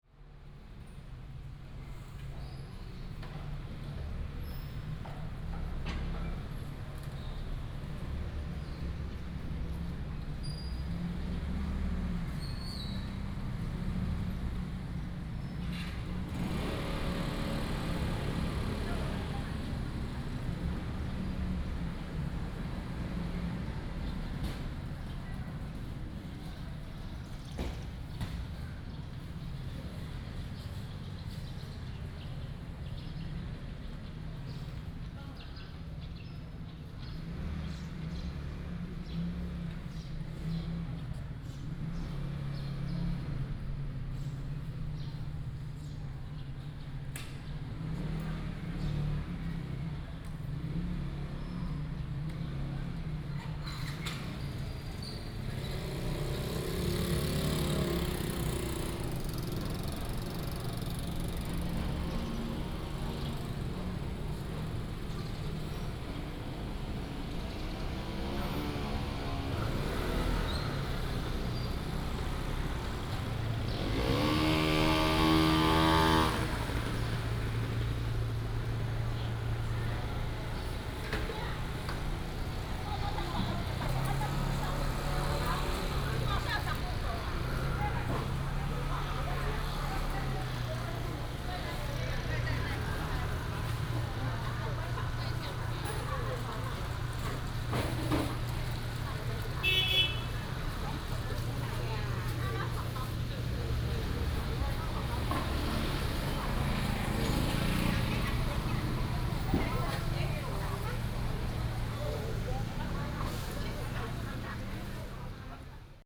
In the square of the temple, Birds sound, traffic sound, The sound of nearby markets